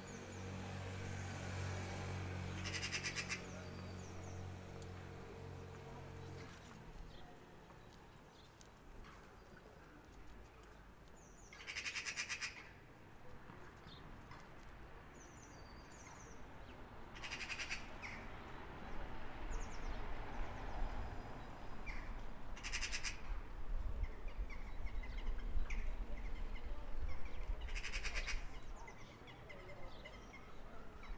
Groenewegje, Den Haag, Netherlands - Magpies building a nest.
Recording made form my window during the lockdown.
Two magpies are busy building a nest on tree just in front of my house.
During the pandemic seems that birds sing even louder.
The suspension of human activities cause sonic peculiarities in urban soundscape; the relationship between bio-phony and anthropo-phony seems more balanced.
Zuid-Holland, Nederland, 23 January 2021